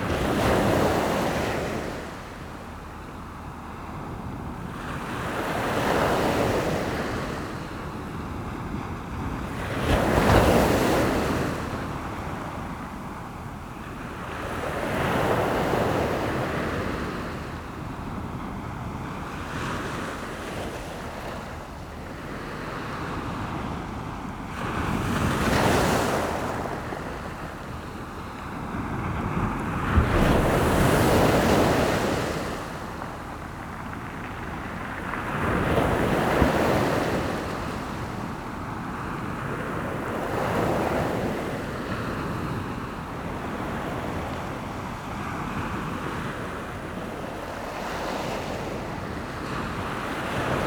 Amble, Morpeth, UK - Falling tide ... Amble ...
Falling tide ... Amble ... lavalier mics on T bar fastened to mini tripod ... bird calls from passing black-headed gulls ...